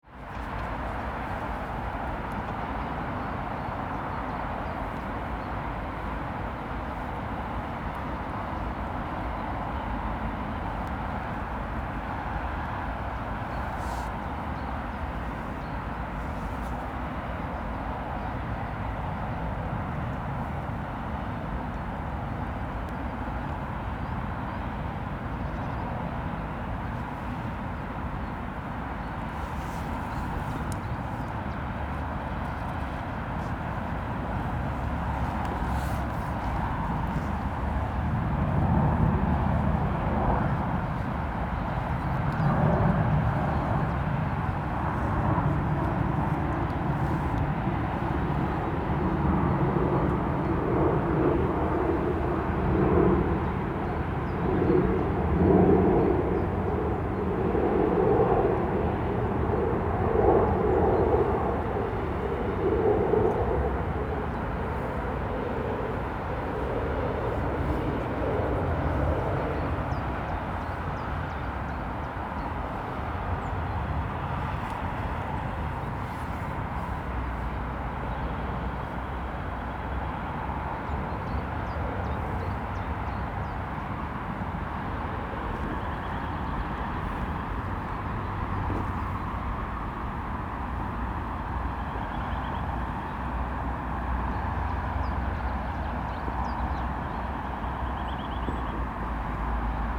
{"title": "From the middle of the bridge, Strakonická, Velká Chuchle-Barrandov, Czechia - From the middle of the bridge", "date": "2022-04-09 18:10:00", "description": "As well as a singletrack railway line there is a public footpath across this bridge. From here there are fantastic views of the river Vltava and the extraordinary, transport dominated, soundscape is extremely loud. The spectacular valley geography concentrates all the major transport systems – road, rail and tram – into this one bottleneck so they all run close to and parallel with the river. The roads are continuously busy creating a constant roar of traffic that fills the valley with sound. It seems even louder high up, as on this bridge or from the surrounding hillsides. In fact, when standing mid bridge one hears almost nothing but the immersive traffic, except when planes thunder directly above to land at Prague airport or when occasional trains power past only two meters from your ears. The contrast between the expansive views and the overwhelming soundscape is extreme.\nIn former times Braník Bridge was known as the Bridge of the Intelligentsia.", "latitude": "50.03", "longitude": "14.40", "altitude": "195", "timezone": "Europe/Prague"}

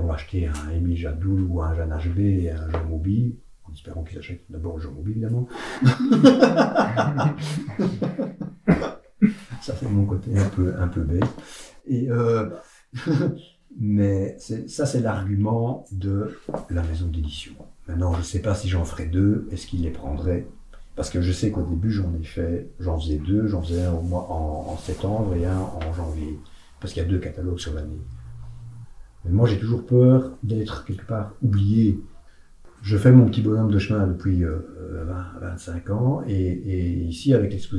{"title": "Court-St.-Étienne, Belgique - Papaloup", "date": "2015-06-27 14:10:00", "description": "Interview of \"Papaloup\", a drawer for very young children (1-3 years). He explains why he began to draw and why he went to be baby keeper.", "latitude": "50.64", "longitude": "4.55", "altitude": "69", "timezone": "Europe/Brussels"}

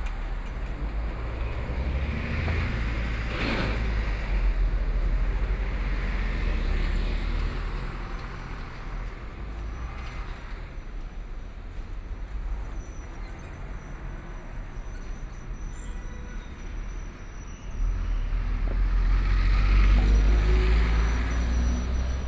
{"title": "East Nanjing Road Station, Shanghai - walking in the Station", "date": "2013-12-03 17:19:00", "description": "From the street to go into the underground floor of the station, After the station hall toward the station platform, Then enter the subway car, Binaural recording, Zoom H6+ Soundman OKM II", "latitude": "31.24", "longitude": "121.48", "altitude": "9", "timezone": "Asia/Shanghai"}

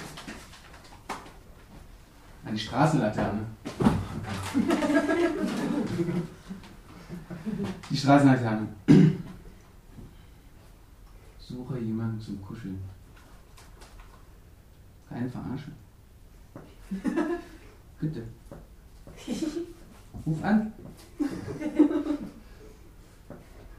{"title": "Der Kanal, Weisestr. 59. Auschschnitt aus dem 4. Synergeitischen Symposium - Der Kanal, Ausschnitt aus dem 7. Synergeitischen Symposium", "date": "2011-12-17 19:45:00", "description": "A seventh time have we come together to gather all our wicked letters becoming texts. It turns out, DER KANAL is becoming more and more an orphanage of unread poems. Presented are two extracts from the six hour lecture held in decembre. The first text is entitled ::Glücklich werden::", "latitude": "52.48", "longitude": "13.42", "timezone": "Europe/Berlin"}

